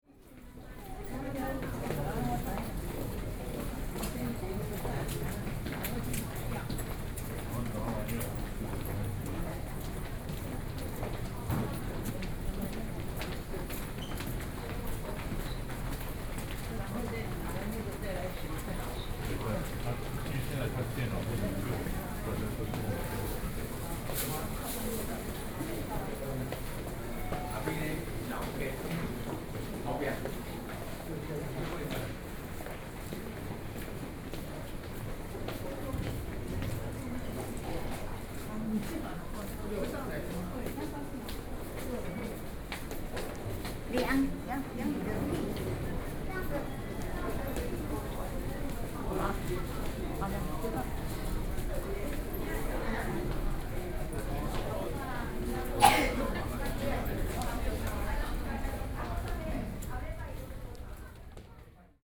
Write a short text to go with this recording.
Walking in the hospital, (Sound and Taiwan -Taiwan SoundMap project/SoundMap20121129-6), Binaural recordings, Sony PCM D50 + Soundman OKM II